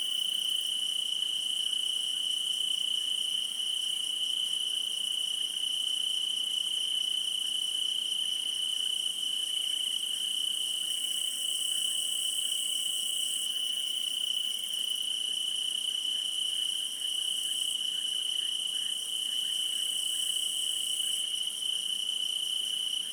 Dadia, Greece, crickets and bushcrickets in grape wineyard
greece, crickets, night
Soufli, Greece